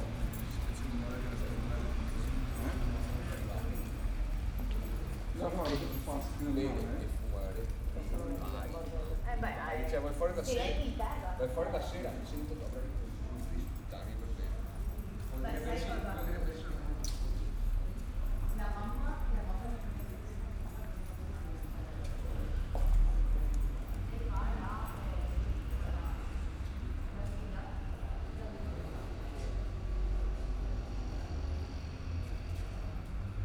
Via di Cavana, Trieste, Italy - night ambience
night ambience at Via di Cavana, all shops and cafes are closed.
(SD702, DPA4060)